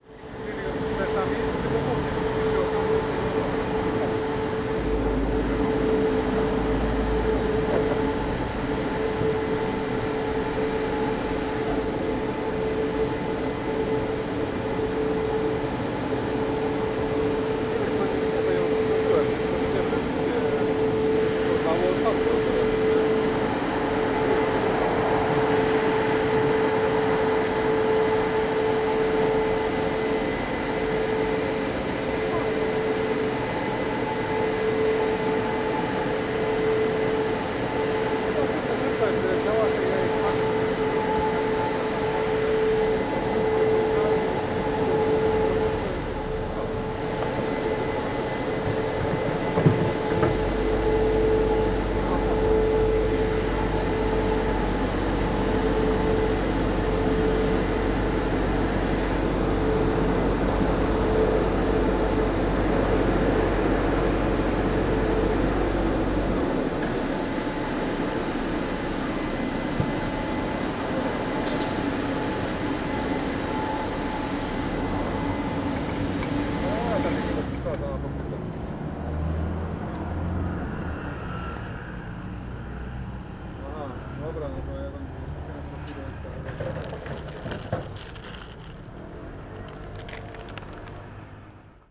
Strozynskiego, Orlen gas station - car wash and air compresor
sound of automatic car wash in full operation. man talking on a phone nearby. air compressor pumping air into a tire. (roland r-07 internal mics)
Poznań, Poland, 12 September, 11:04